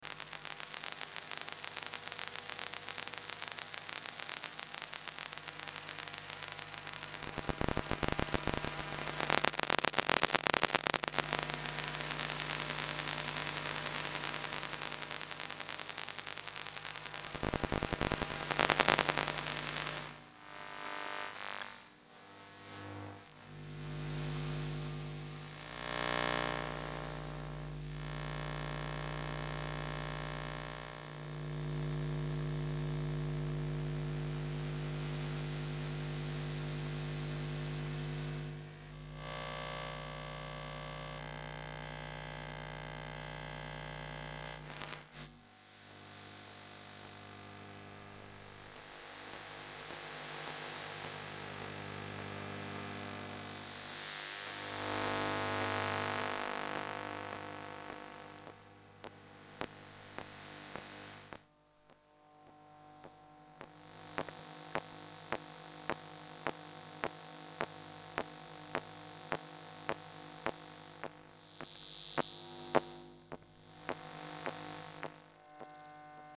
Rue de lOrme de Chamars, Besançon, France - Borne velocity - Arsenal
micro Elektrosluch 3+
Festival Bien urbain
Jérome Fino & Somaticae